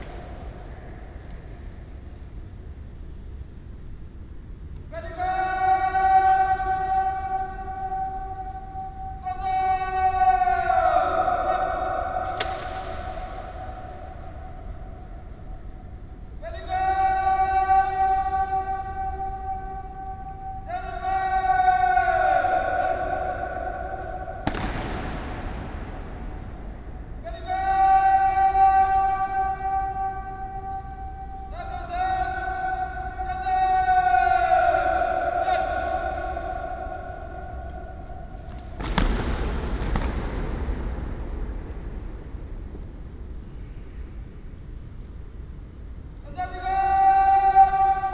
Jacob Lines, Karachi, Pakistan - Military salute at the tomb of Muhammad Ali Jinnah
Recording of the daily military salute at the Muhammad Ali Jinnah tomb, otherwise known as Mazar-e-Quaid. Muhammad Ali Jinnah was the founder of Pakistan.
13 October 2015, 15:00